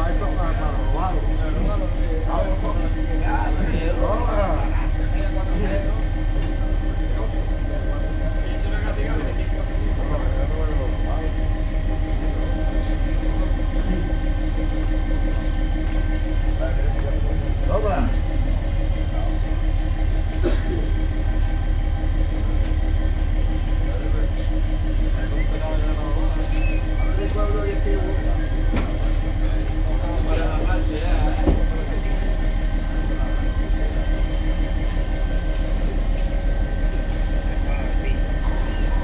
Fuengirola, España - Llega el primer barco con sardinas / First boat arrives with the sardines
Llega el primer barco con sardinas y los subasteros discuten sobre el precio y la cantidad / First boat arrives with the sardines and people discussing about the price and quantity
Fuengirola, Spain, July 2012